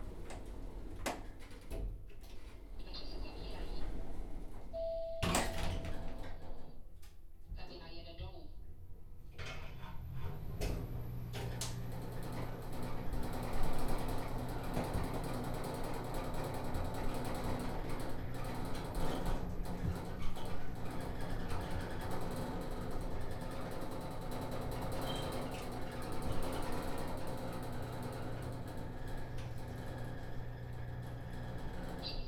Hotel Gloria - elevator
riding the elevator
23 June 2012, ~08:00